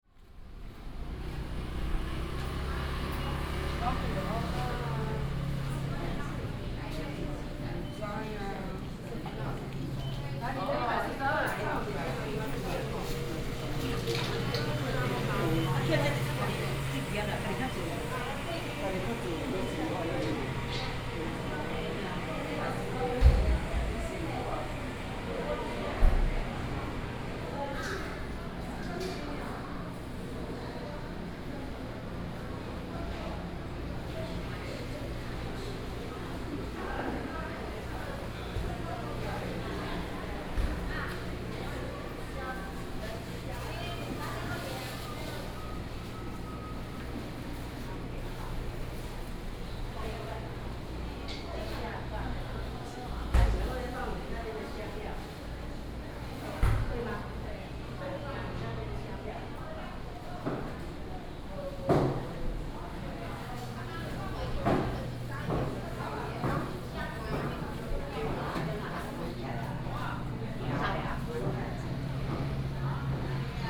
小康市場, Nantun Dist., Taichung City - walking in the market
walking in the market, traffic sound, Public retail market, Binaural recordings, Sony PCM D100+ Soundman OKM II